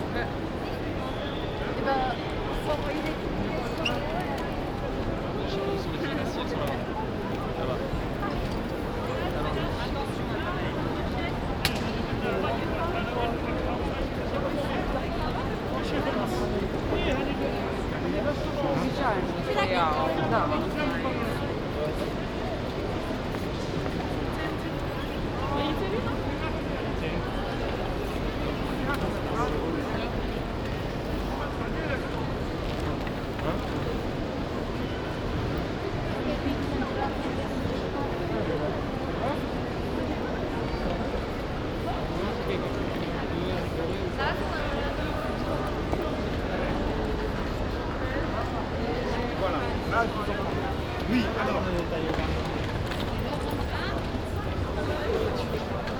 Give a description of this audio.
"Sunday walk at railway station in Paris in the time of COVID19": Soundwalk, Sunday, October 18th 2020: Paris is scarlett zone for COVID-19 pandemic. Walking in the Gare de Lyon railway station before taking the train to Turin. Start at 1:12 p.m. end at 1:44 p.m. duration 32’12”, As binaural recording is suggested headphones listening. Path is associated with synchronized GPS track recorded in the (kmz, kml, gpx) files downloadable here: For same set of recordings go to: